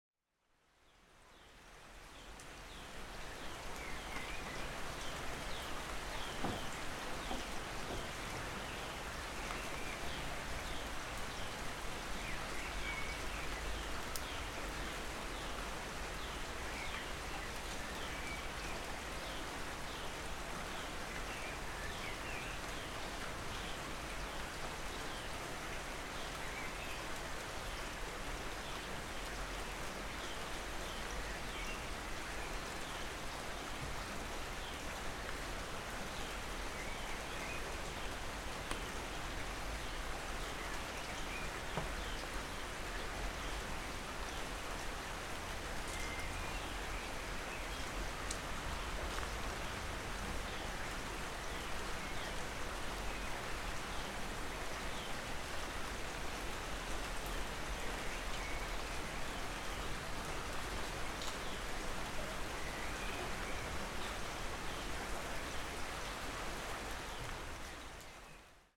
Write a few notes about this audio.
It was a rainy noon in April during the covid-19 lockdown. Recorded on a balcony towards the courtyard. Zoom H5 with X/Y-microphone